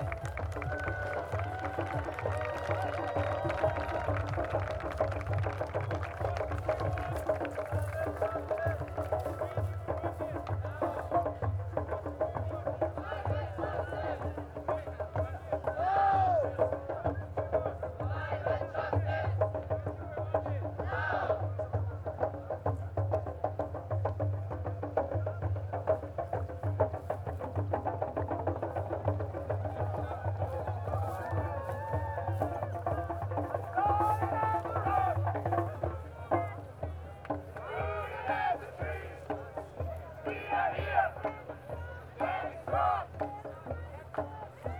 near Morschenich, Hambacher Forst, Deutschland - sound of demonstration
sound of the nearby demonstration against the deforestation of the remains of this forest (Hambacher Forst), in order for German energy company RW Power to exploit lignite resources in this area
(Sony PCM D50)